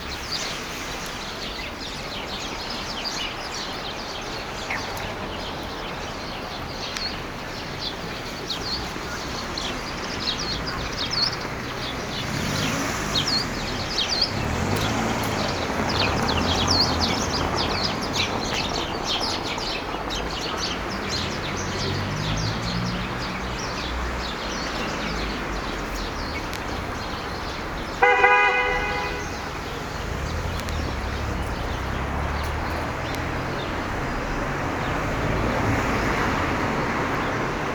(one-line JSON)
{"title": "Graefestraße, Berlin, Deutschland - Soundwalk Graefestrasse", "date": "2018-02-09 14:45:00", "description": "Soundwalk: Along Graefestrasse until Planufer\nFriday afternoon, sunny (0° - 3° degree)\nEntlang der Graefestrasse bis Planufer\nFreitag Nachmittag, sonnig (0° - 3° Grad)\nRecorder / Aufnahmegerät: Zoom H2n\nMikrophones: Soundman OKM II Klassik solo", "latitude": "52.49", "longitude": "13.42", "altitude": "37", "timezone": "Europe/Berlin"}